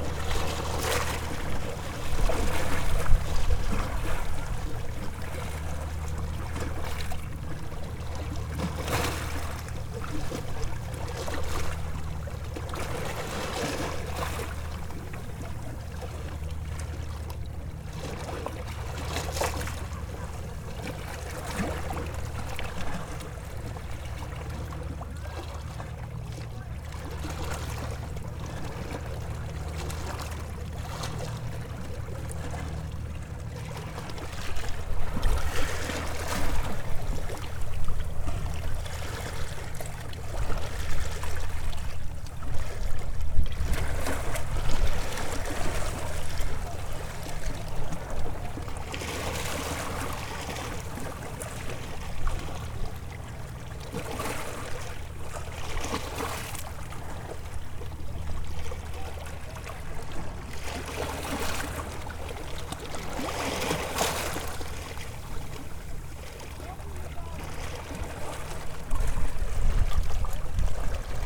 Waves, Korcula Island, Croatia, 2007-08-13
Waves washing on the shore in Korcula island, Croatia, near the port.
13 August 2007